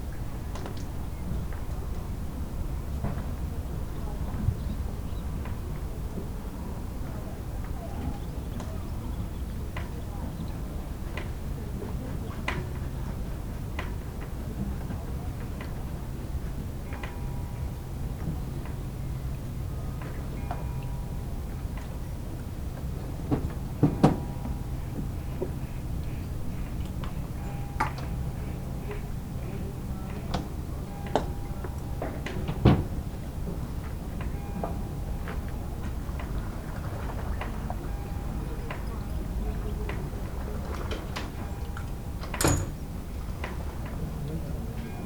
enkhuizen: marina - the city, the country & me: marina berth
carillon in the distance
the city, the country & me: july 27, 2012
Enkhuizen, The Netherlands, 27 July 2012, 20:37